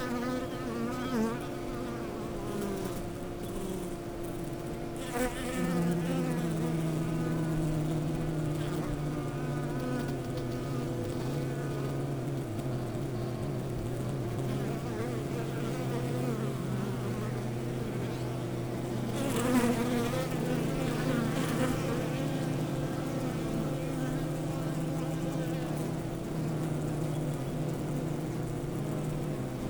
퇴골계곡 꿀벌집들 Taegol valley apiary undisturbed bees
퇴골계곡 꿀벌집들_Taegol valley apiary_undisturbed bees_
강원도, 대한민국